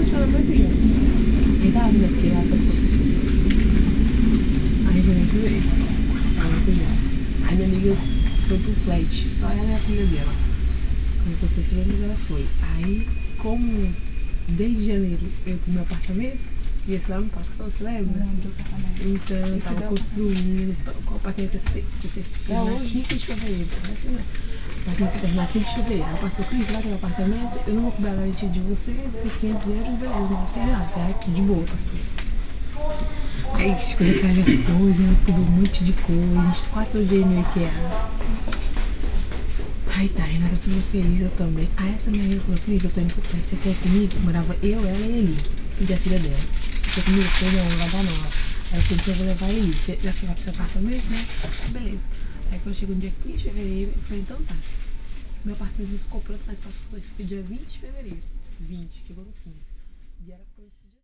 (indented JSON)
{
  "date": "2008-04-25 17:30:00",
  "description": "Brussels, North Station, train conversation",
  "latitude": "50.86",
  "longitude": "4.36",
  "timezone": "Europe/Brussels"
}